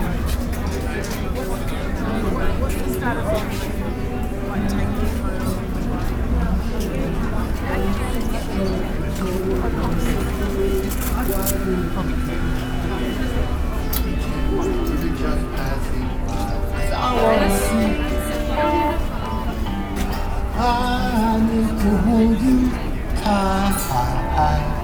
A wander along the Broadway Market and back.
Greater London, England, United Kingdom